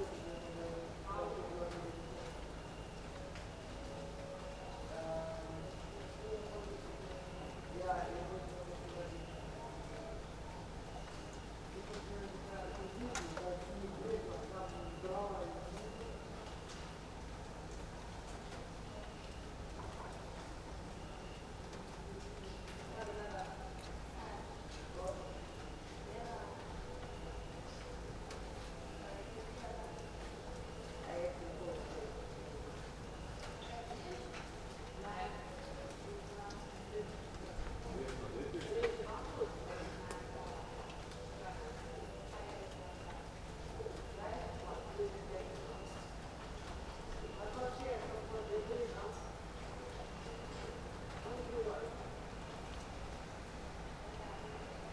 from/behind window, Mladinska, Maribor, Slovenia - night cricket, leftovers of rain, bats, distant voices
13 August 2014, ~23:00